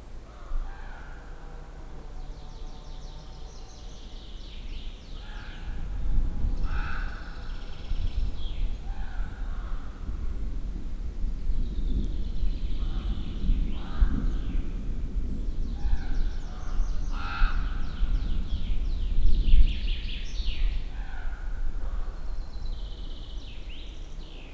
Zielonnka, forest road - crows and incoming storm
(binaural) forest ambience. crows chasing each other and yapping. rumble of incoming storm. sound of a chain saw far away. (sony d50 + luhd pm01bins)
Poland